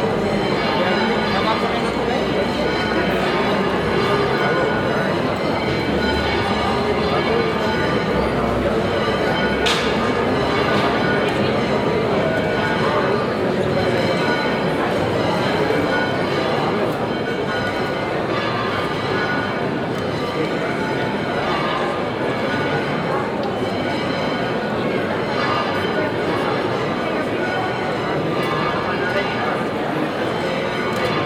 Sevilla, Provinz Sevilla, Spanien - Sevilla - Basilica de la Macarena - white night
Inside the Basilica de la Macarena during the white night. The sound of people inside the Basilica talking.
international city sounds - topographic field recordings and social ambiences
Sevilla, Spain, October 2016